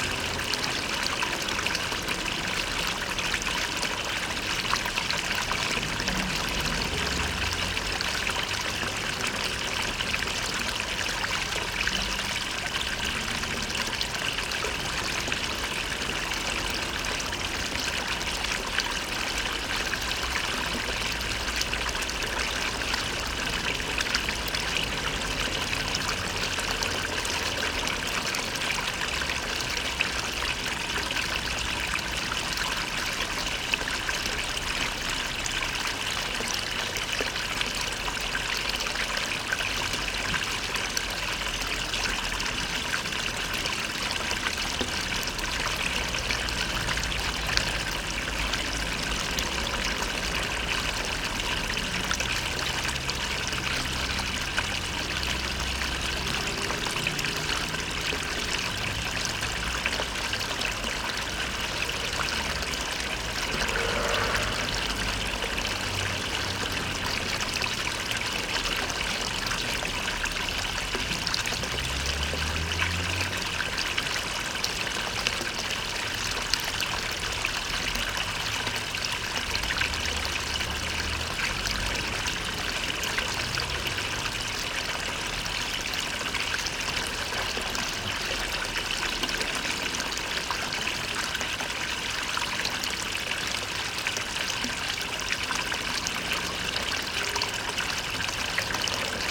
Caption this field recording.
Lavoir Saint Léonard à Honfleur (Calvados)